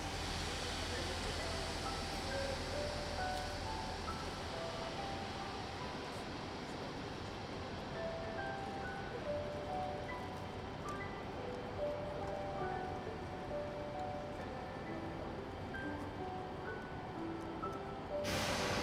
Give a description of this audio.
Gare de Lille Flandres - Département du Nord, Ambiance intérieure, ZOOM H3VR